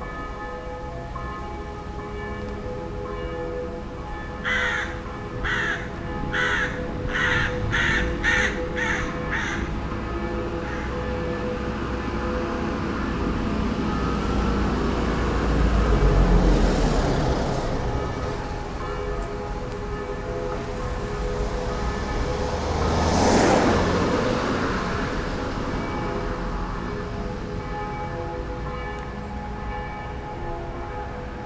church bells while car door closes, traffic is passing by, a raven flying and chirping in a nearby tree
soundmap nrw: social ambiences/ listen to the people in & outdoor topographic field recordings